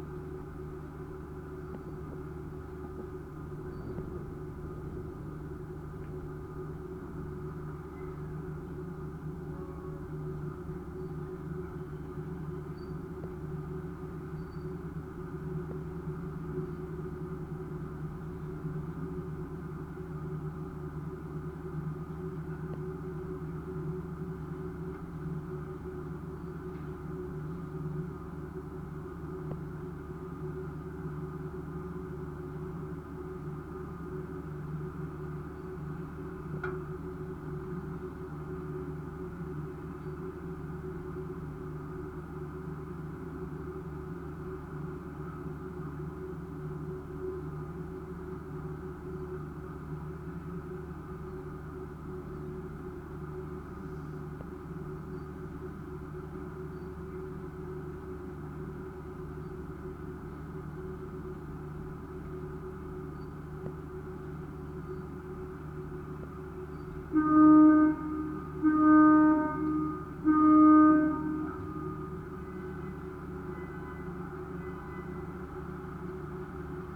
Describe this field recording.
crossing the lake constance by boat with a contact microphone on it...